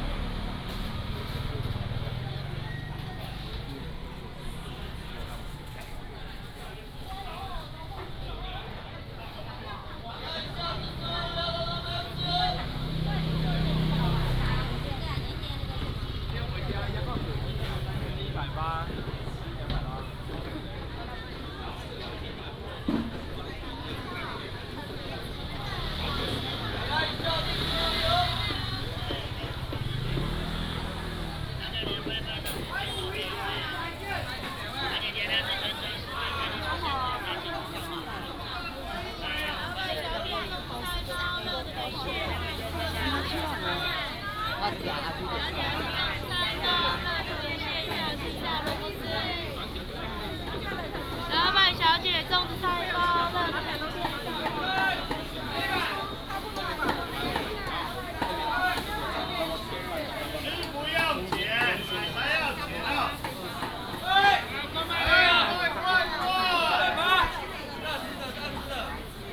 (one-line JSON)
{"title": "Bo’ai St., Miaoli City - traditional market", "date": "2017-02-16 09:01:00", "description": "Walking in the traditional market, Market selling sound, sound of birds", "latitude": "24.55", "longitude": "120.82", "altitude": "64", "timezone": "Asia/Taipei"}